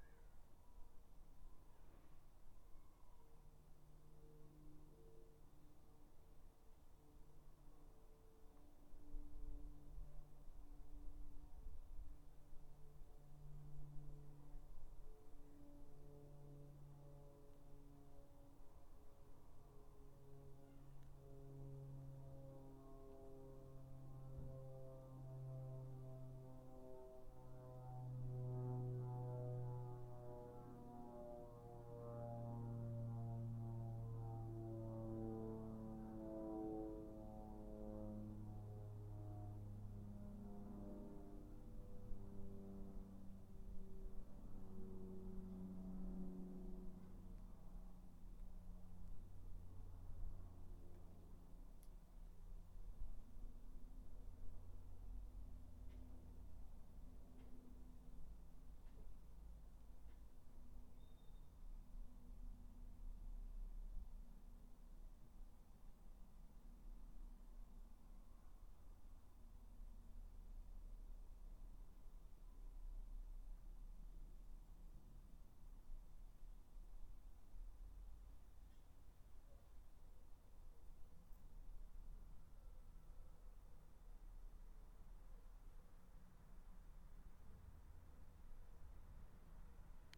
Dorridge, West Midlands, UK - Garden 8
3 minute recording of my back garden recorded on a Yamaha Pocketrak